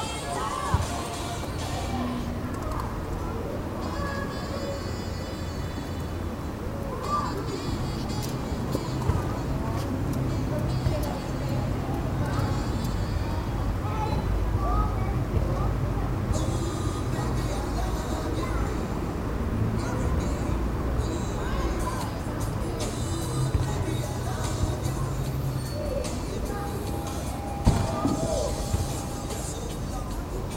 the last day of the season at the public swimming pool.
recorded aug 31st, 2008.
Saint Gallen, Switzerland